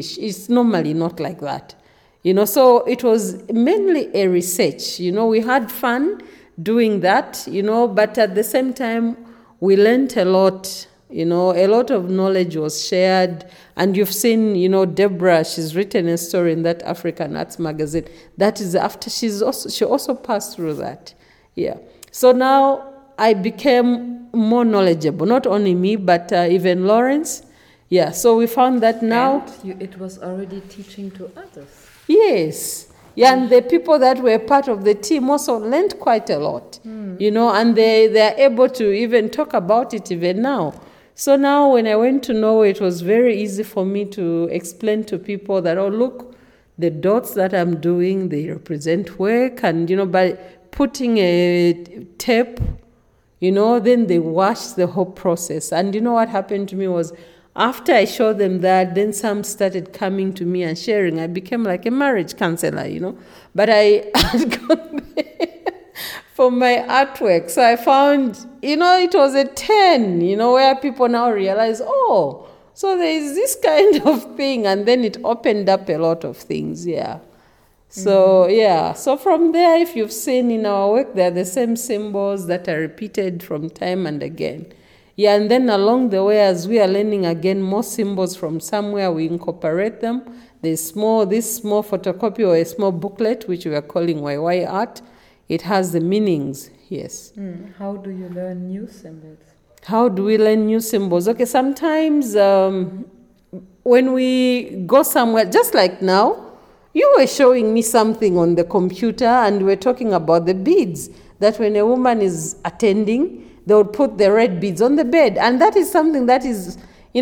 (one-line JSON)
{"title": "Wayi Wayi Gallery & Studio, Livingstone, Zambia - Agness Buya Yombwe describes “Musa Ceremony”...", "date": "2012-11-13 20:55:00", "description": "… a bit later inside Wayi Wayi Gallery, Agness describes “Mbusa Ceremony”, an arranged re-staging of Agness’ and Laurence Bemba wedding (ubwinga), at once performance and research. The event initiated the artist-couple as well as many invited guests into the secret teachings of Mbusa, it married traditional women’s craft to the realm of contemporary arts and opened new channels of communication between indigenous culture, Art, ritual, performance, teaching, and life.\nShe then goes on to talk about how indigenous culture inspires her as a contemporary artist, and refers to a recording from Binga, I had played to her earlier which left a picture in her head… (it’s the recording with Luyando and Janet at BaTonga Museum about women’s initation among the Tonga people; you can find it here on the map...)", "latitude": "-17.84", "longitude": "25.86", "altitude": "955", "timezone": "Africa/Lusaka"}